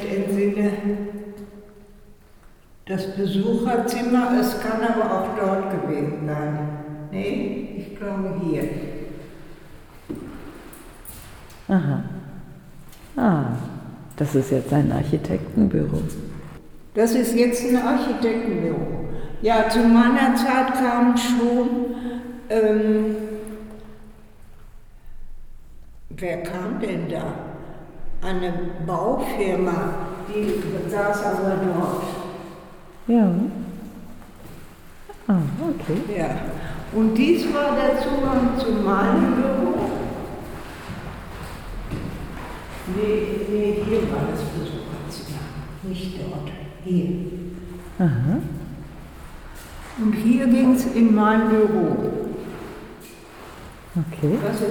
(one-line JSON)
{"title": "Amtshaus Pelkum, Hamm, Germany - Ilsemarie von Scheven talks local history in situ", "date": "2014-11-04 11:15:00", "description": "We visit the town hall (Amtshaus) Pelkum with the former city archivist Ilsemarie von Scheven. The 93-year-old guides us through the building along her memories. The staircase and corridors awaken memories; most of the rooms less so; a journey along Ms von Scheven's memories of a time when the archives of the new independent city of Hamm were housed here in the building or rather, were re-created under the careful hands of two, quote von Scheven, \"50-year-old non-specialists\"; a re-creation, literally like a Phoenix rising from the ashes. The women's mission was to \"build a replacement archive for the city\". The town's archives had been burnt down with the town hall in the bombs of the Second World War; the only one in Westphalia, as Ms von Scheven points out.\nWhere the memory leaves us, we explore what can nowadays be found in the building. The head of the city hall himself grants us access and accompanies us.", "latitude": "51.64", "longitude": "7.75", "altitude": "63", "timezone": "Europe/Berlin"}